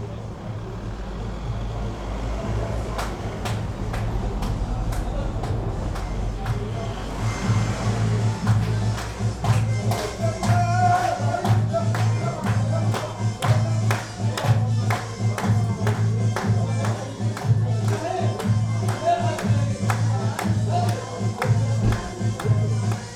Berlin: Vermessungspunkt Friedelstraße / Maybachufer - Klangvermessung Kreuzkölln ::: 24.09.2010 ::: 01:23
Berlin, Germany, 24 September